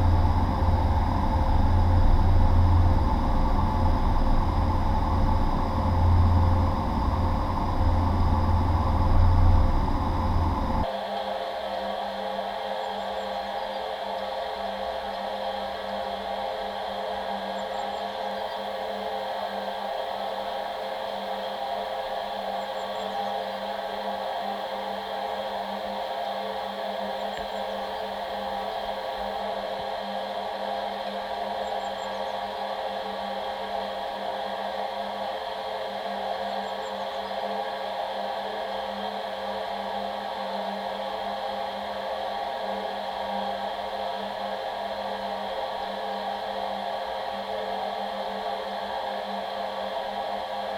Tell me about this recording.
Inside a huge steel pipe, running on the canal, Ratibor strasse, Berlin, June 15th 2007. First recording of an hidden source of found sounds serie.